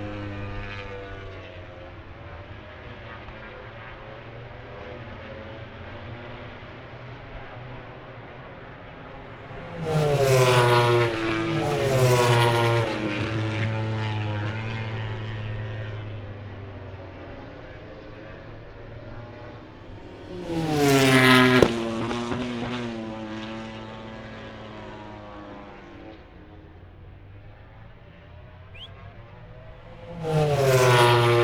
Silverstone Circuit, Towcester, UK - british motorcycle grand prix 2021 ... moto grand prix ...
moto grand prix free practice four ... wellington straight ... olympus ls 14 integral mics ...
England, United Kingdom